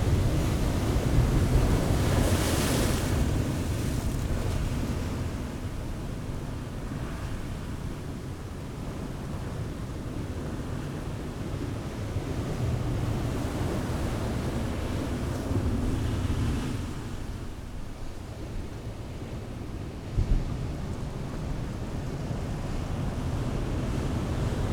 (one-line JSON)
{"title": "Whitby, UK - high tide ... two hours after ...", "date": "2018-12-27 09:40:00", "description": "high tide ... two hours after ... lavaliers clipped to sandwich box ...", "latitude": "54.49", "longitude": "-0.61", "altitude": "1", "timezone": "Europe/London"}